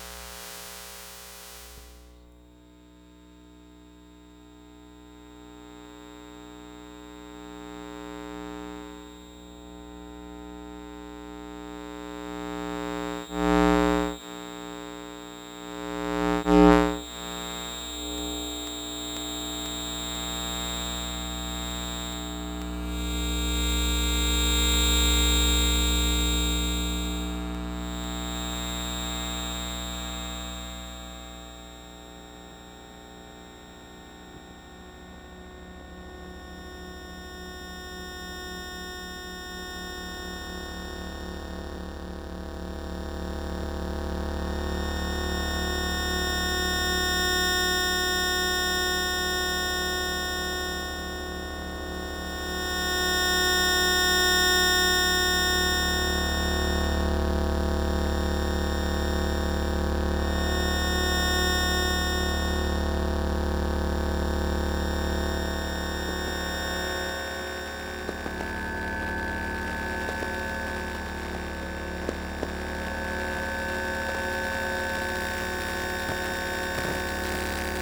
The Funny Farm, Meaford, ON, Canada - Electromagnetic improvisation
Exploring electromagnetic fields at the Funny Farm, with a LOM Elektrosluch.
July 2016